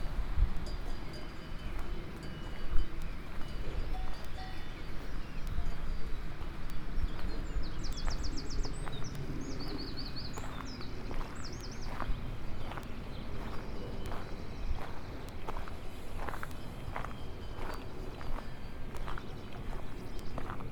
Cow at Plan des Bos, vanoise, French Alps.
Les vaches au Plan des Bos, dans la Vanoise.
Pralognan-la-Vanoise, France